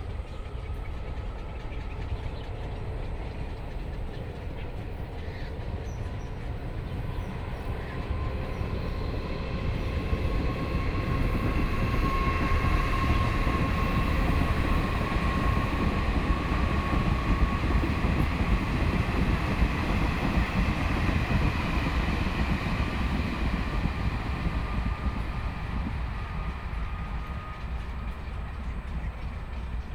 羅東林業文化園區, Yilan County - in the Park
in the Park, Birdsong sound